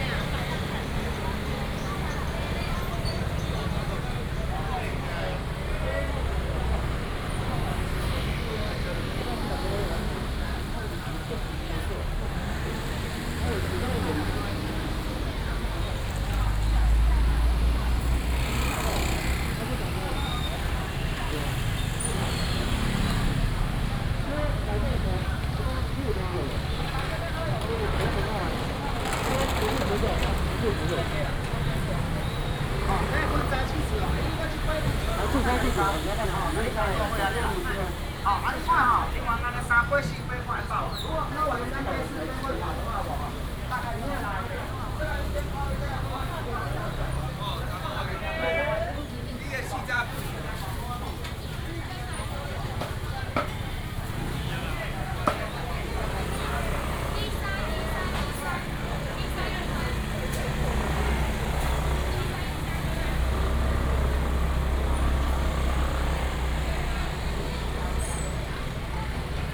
Zhongzheng St., 羅東鎮仁和里 - Walking in the traditional market
Walking in the traditional market, A lot of motorcycles, Rainy day, vendors peddling, Binaural recordings, Sony PCM D100+ Soundman OKM II
Yilan County, Taiwan, 9 December 2017, ~10:00